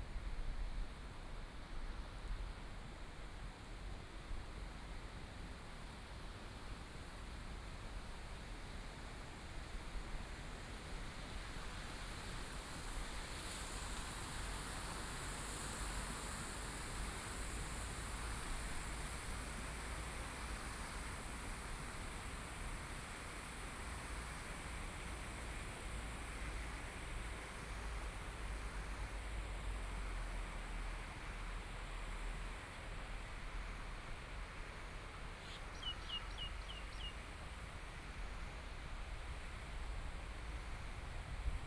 Guanxi Township, Hsinchu County - The sound of water
Walking along the river side, Walking from upstream to downstream direction, Binaural recording, Zoom H6+ Soundman OKM II
22 December 2013, ~13:00